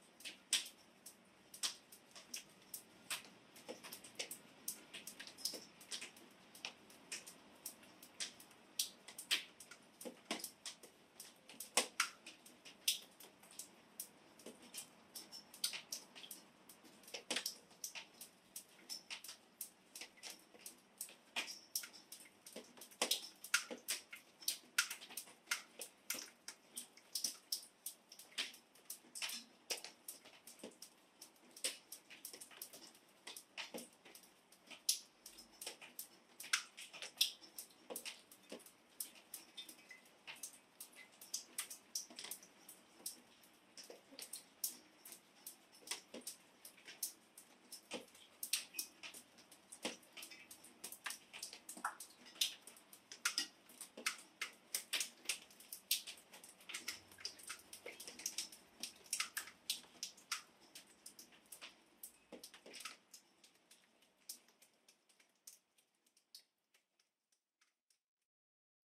Wittekindstraße, Hameln, Germany DROPS OF MELTING SNOW & CITY SOUNDS (3D Ambisonics Audio) - DROPS OF MELTING SNOW & CITY SOUNDS (3D Ambisonics Audio)
DROPS OF MELTING SNOW & CITY SOUNDS (3D Ambisonics Audio).
Inside the recording is the ambulance car, when it was driving in the neighborhood and the car passing by in close distance from the mic stand. Drops of Melting Snow felt from the roof on the street just 2m away from the mic.
ZOOM H3-VR Ambisonics Microphone